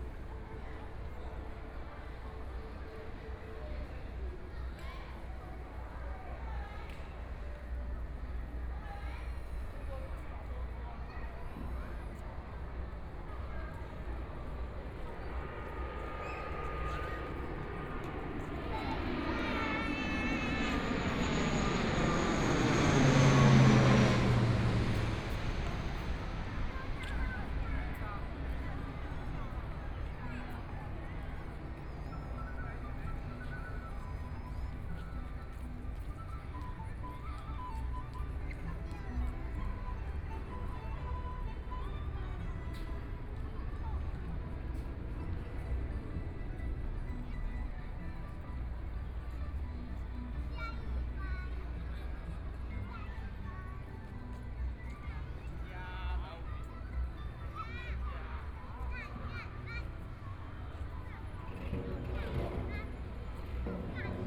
Follow the footsteps, Walking through the park, Environmental sounds, Traffic Sound, Aircraft flying through, Tourist, Clammy cloudy, Binaural recordings, Zoom H4n+ Soundman OKM II
Zhongshan District, Taipei City, Taiwan, 10 February